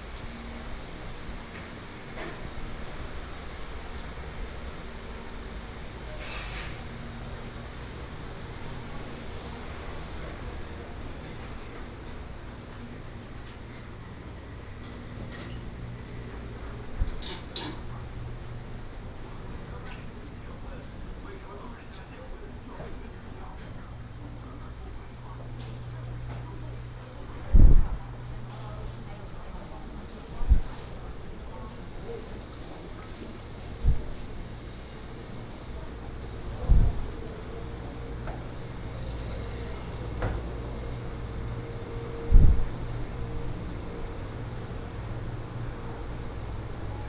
信維市場, Da’an Dist., Taipei City - Old traditional market
Old traditional market, In the ground floor of the entire building